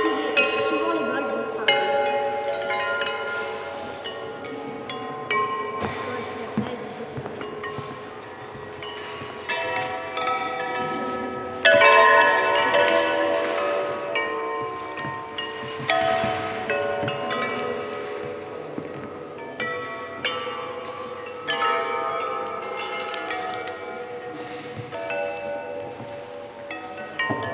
Vlamingenstraat, Leuven, Belgien - Leuven - Kadoc - clinamem - sound installation
Inside the old cathedral - the sound of a sound installation by Celeste Boursier-Mougenot entitled "clinamen" - part of the soun art festival Hear/ Here in Leuven. The sounds of floating porcelan bowls triggering each other while swimming in a round pool surrounded by
visitors talking.
international sound scapes & art sounds